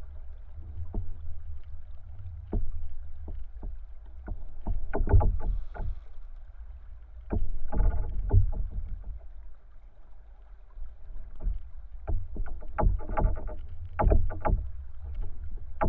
Lithuania, rubbing pine trees
sounding trees at river Savasa. recorded with contact microphone
Utenos apskritis, Lietuva